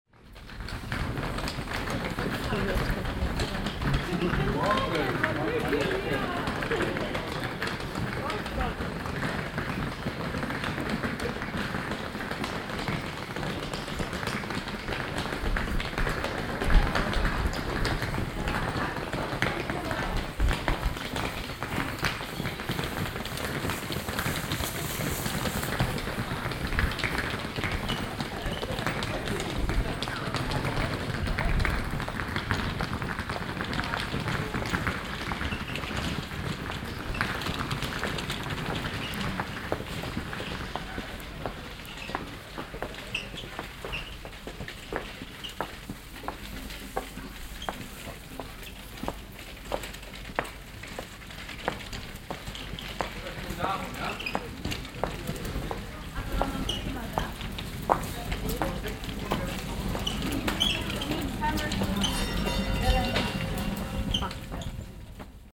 mettmann, mittelstrasse, schritte und rollen
schritte und gezogene rollkoffer auf kofsteinpflaster
- soundmap nrw
project: social ambiences/ listen to the people - in & outdoor nearfield recordings